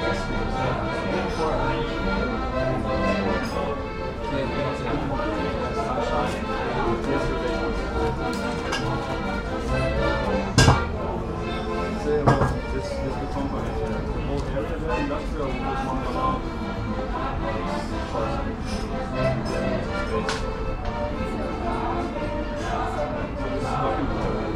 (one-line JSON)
{"title": "Maribor, Slovenia - live music in Mitnica bar, monday morning", "date": "2012-06-18 09:15:00", "description": "meeting andrej in a nearby cafe, there was live music coming from the kitchen", "latitude": "46.57", "longitude": "15.63", "altitude": "281", "timezone": "Europe/Ljubljana"}